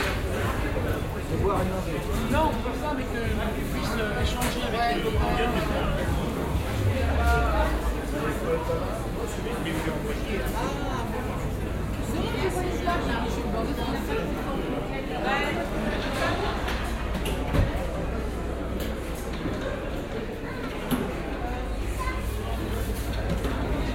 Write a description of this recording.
Walk on the Market next to Grande Notre Dame, first outdoor, than indoor, binaural recording.